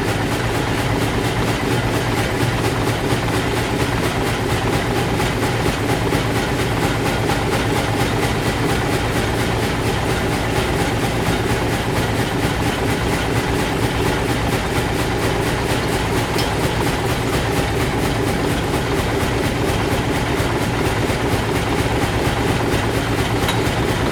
Illingmühle, Hartmannsdorf-Reichenau, Deutschland - Watersawmill Illingmühle, Erzgebirge
I used a Zoom-HC2-recorder.
20 May, 11:00